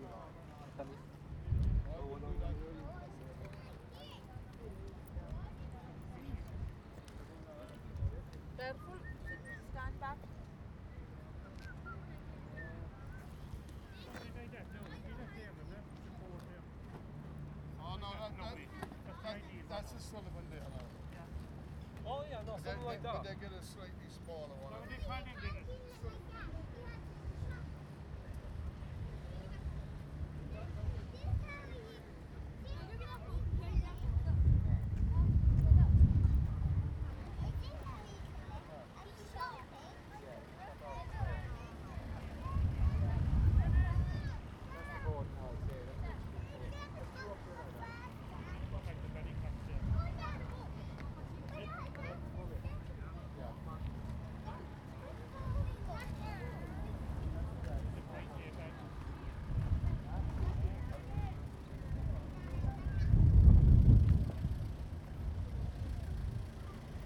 an excerpt from our wld2017 soundwalk